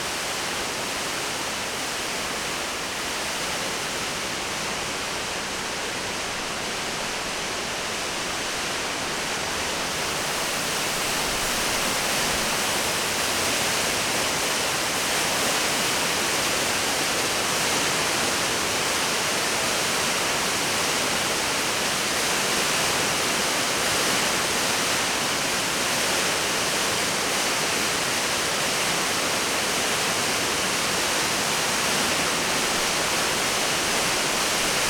La cascade dans la grotte des Buttes Chaumont
14 mètres de large.
20 mètres de haut.
Décorée de stalactites dont les plus grandes atteignent 8 mètres.
Paris, France, 6 July 2009